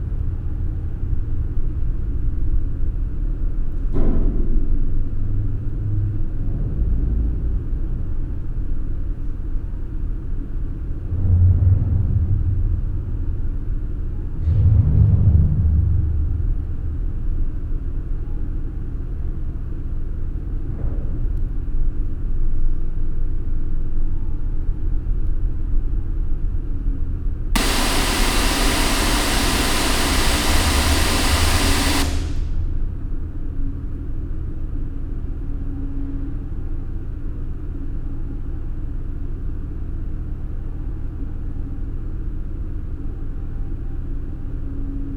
SBG, Puigneró, antigua fábrica - Sótano, depósitos
Ambiente en el sótano de la fábrica, en una zona ahora desocupada, donde aún se encuentran los depósitos de combustible y productos químicos utilizados por la antigua fábrica Puigneró.